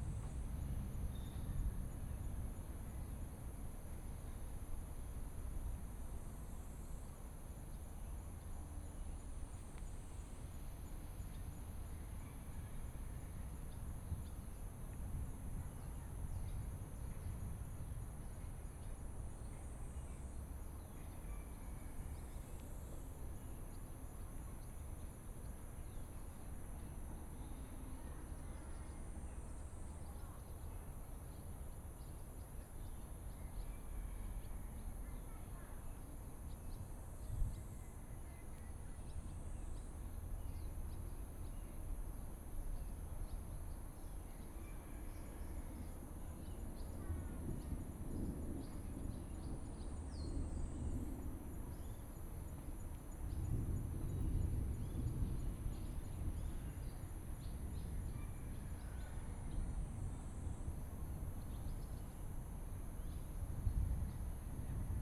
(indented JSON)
{
  "title": "和美山步道, Xindian Dist., New Taipei City - In the woods",
  "date": "2015-07-28 15:27:00",
  "description": "In the woods, Sound of thunder, traffic sound",
  "latitude": "24.96",
  "longitude": "121.53",
  "altitude": "32",
  "timezone": "Asia/Taipei"
}